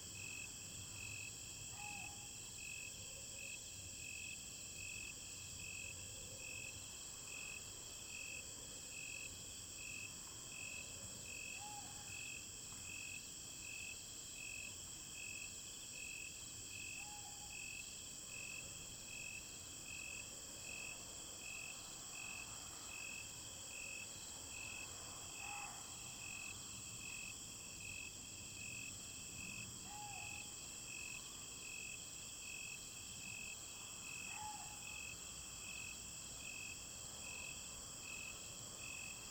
介達國小, 金峰鄉正興村, Taitung County - Evening at school
Evening at school, traffic sound, Frog croak, Insect cry, Dog barking
Zoom H2n MS+XY